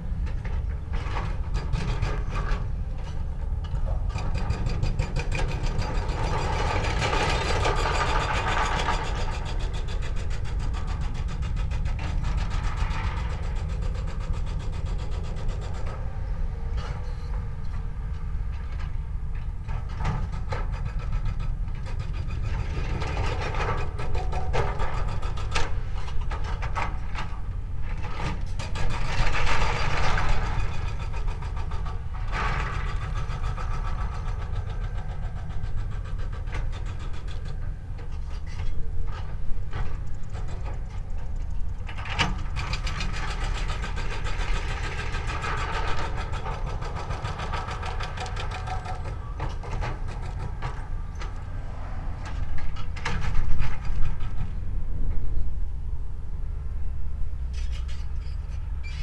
{
  "title": "The Cliffs Interpretation Centre, Triq Panoramika, Ħad-Dingli, Malta - excavator",
  "date": "2020-09-22 11:21:00",
  "description": "excavator with a hydraulic hammer attachment operating in the distance (roland r-07)",
  "latitude": "35.85",
  "longitude": "14.38",
  "altitude": "245",
  "timezone": "Europe/Malta"
}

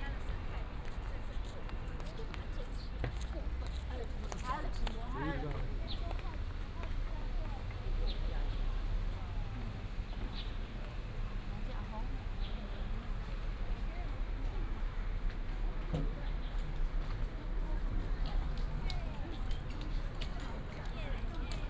{
  "title": "臺北孔子廟, Datong Dist., Taipei City - Walking in the temple",
  "date": "2017-04-09 17:24:00",
  "description": "Walking in the temple, Traffic sound, sound of birds",
  "latitude": "25.07",
  "longitude": "121.52",
  "altitude": "9",
  "timezone": "Asia/Taipei"
}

